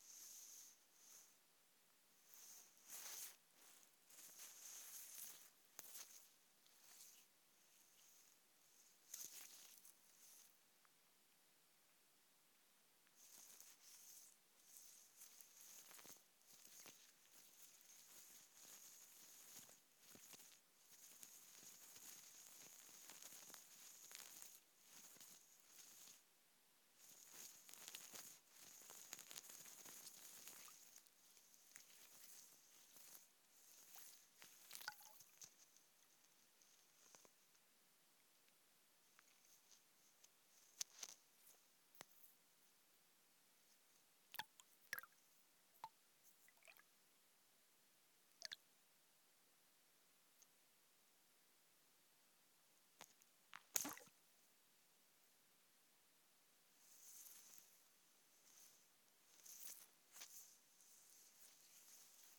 Mollerup skov, Risskov, Danmark - Under water recording of lake in Mollerup Forrest
Drips and splashes from the microphone landing in the water of a small lake. Also contains sounds from the microphone touching the stone and plants on the bottom of the lake.
Recorded using a Hydrophone for under water recordings.
Risskov, Denmark, 2 June, ~14:00